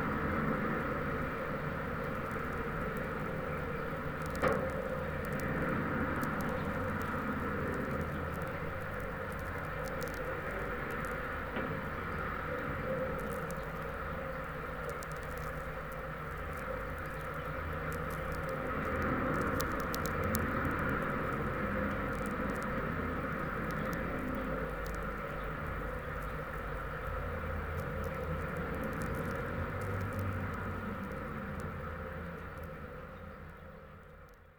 Utena, Lithuania, metallic pipe under the bridge
my usual tune-ing into a object. this time it is some kind big metallic pipe under the bridge. aural exploring with contact mics and electromagnetic field antenna
July 2018